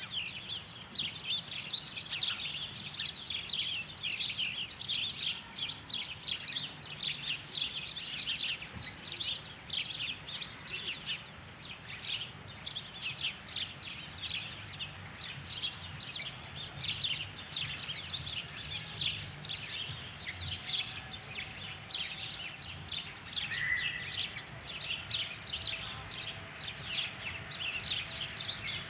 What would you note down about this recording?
Sparrows in the city, spring. Воробьи в городе весной.